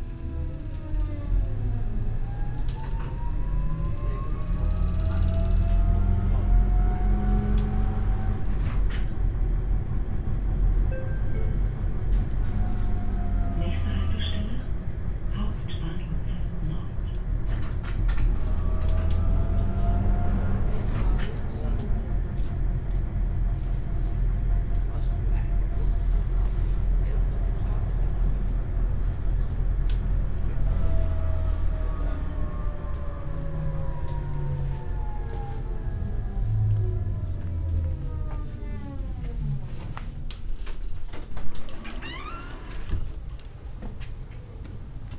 driving in an old east german tram with modern announcement system
soundmap d: social ambiences/ listen to the people - in & outdoor nearfield recordings